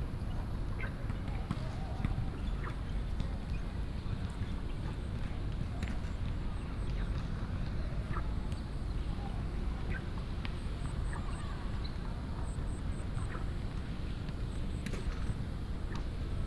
台大醉月湖, Da’an Dist., Taipei City - Next to the lake
The university campus at night, At the lake, Frogs chirping